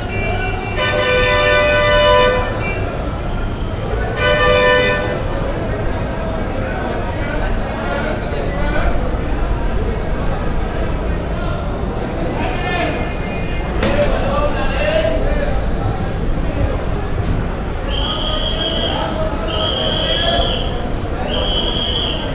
ISBT Bus Station, Delhi
Station before I took my bus to Mcleod Ganj. Insanity of crowds and confusion.
New Delhi, Delhi, India, 25 July 2011